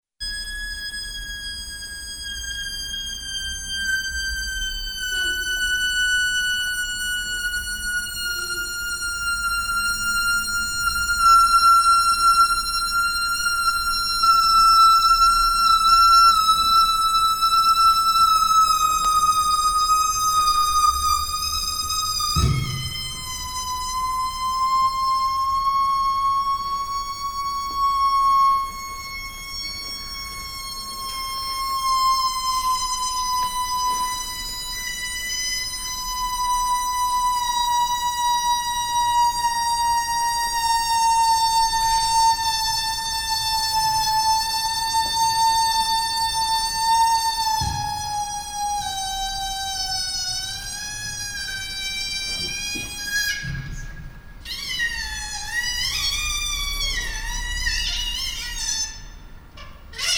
cologne, sachsenring, balloon whistles and kids cry
the whisteling of some ballons on a child's birthday, then the crying of the frightened child
soundmap nrw: social ambiences/ listen to the people in & outdoor topographic field recordings
3 August, 21:21